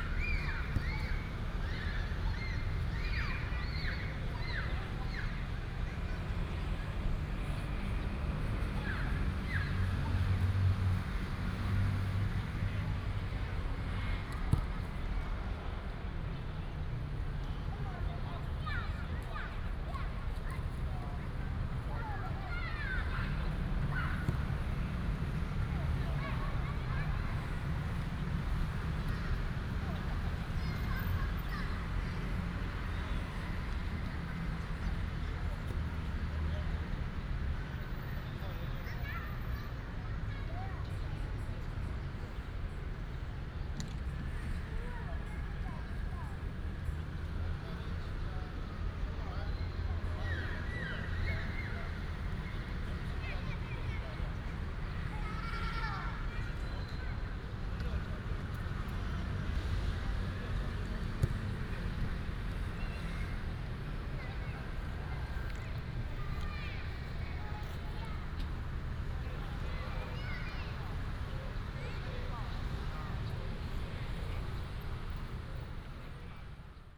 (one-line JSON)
{"title": "空軍十五村, North Dist., Hsinchu City - in the Park", "date": "2017-09-19 17:44:00", "description": "in the Park, Many children are on football lessons, traffic sound, bird, Binaural recordings, Sony PCM D100+ Soundman OKM II", "latitude": "24.80", "longitude": "120.96", "altitude": "23", "timezone": "Asia/Taipei"}